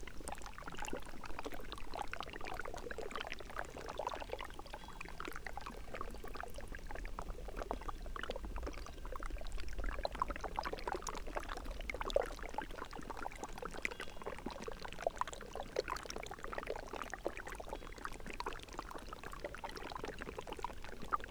해빙강 thawing rivulet
...ice melt flows beneath a frozen rivulet
강원도, 대한민국, 24 January 2021, 12:00